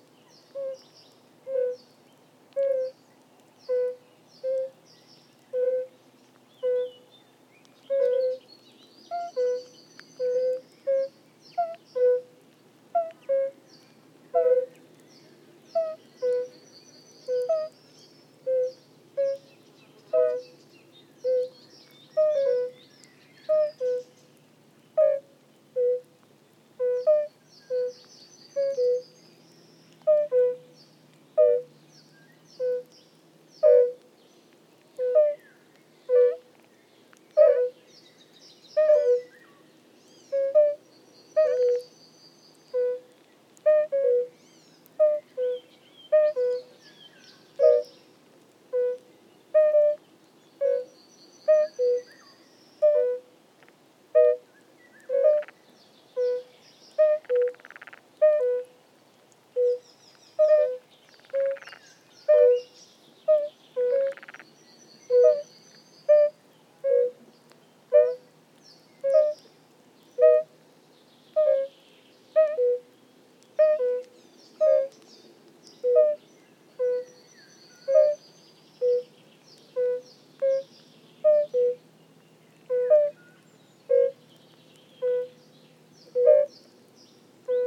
a pair of fire-bellied toads(Bombina bombina) singing.
Utenos apskritis, Lietuva, 13 June 2022